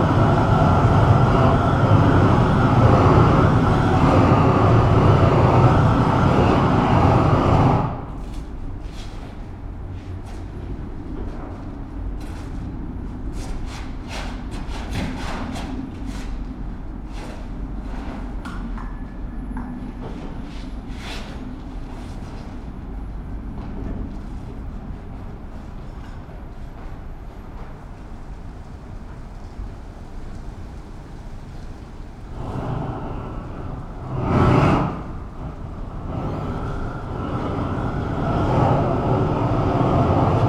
Workers putting macadam roofing for water isolation.